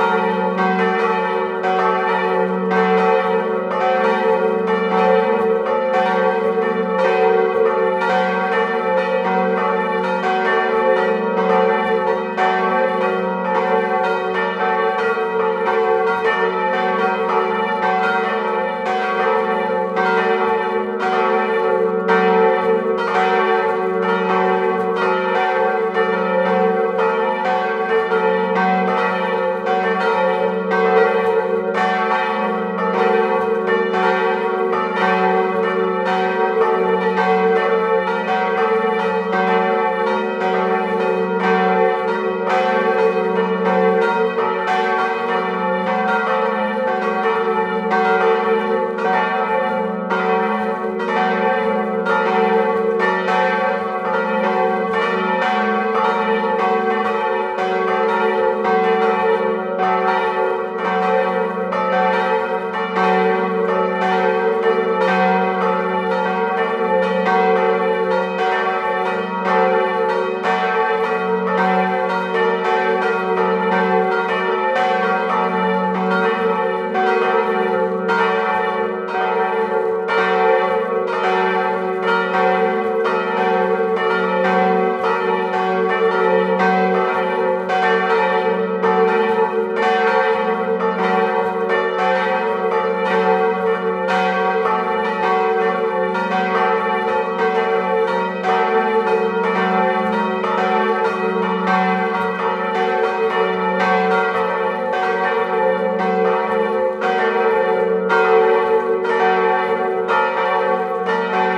Rue du Temple, La Ferté-Vidame, France - La Ferté Vidam - Église St-Nicolas
La Ferté Vidam (Eure-et-Loir)
Église St-Nicolas
La volée Tutti